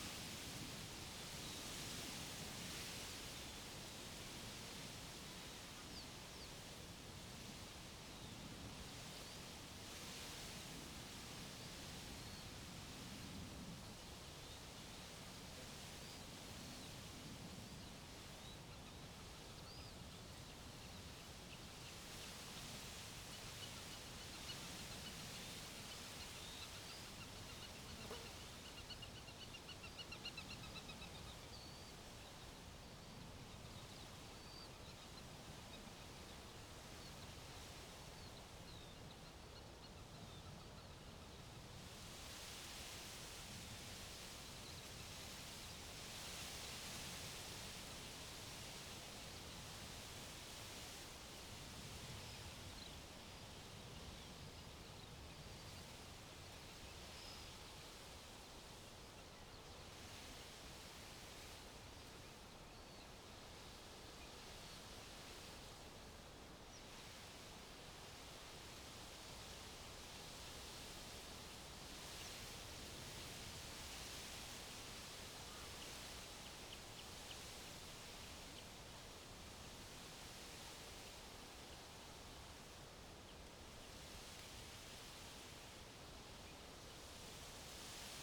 workum, slinkewei: bird sanctuary - the city, the country & me: reed swaying in the wind
the city, the country & me: june 24, 2015
Workum, Netherlands, 24 June 2015